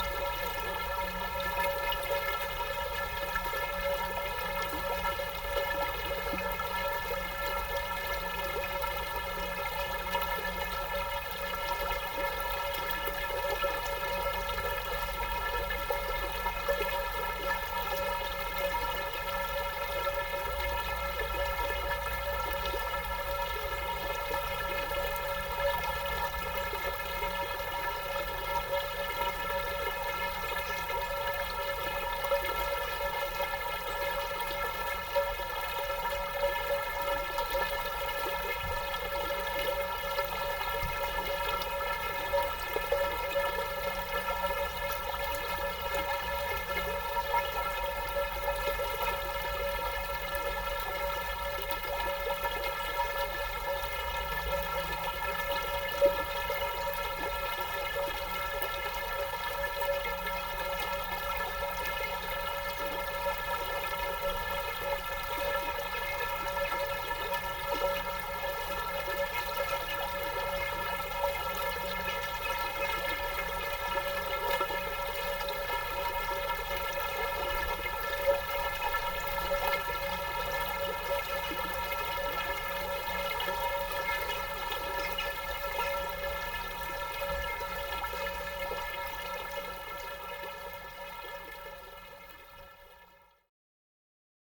Utena, Lithuania, pipe in the river
Some metallic pipe in the river. Testing new Instamic ProPlus mini recorder placed just inside the pipe.
Utenos rajono savivaldybė, Utenos apskritis, Lietuva, 23 October, 17:10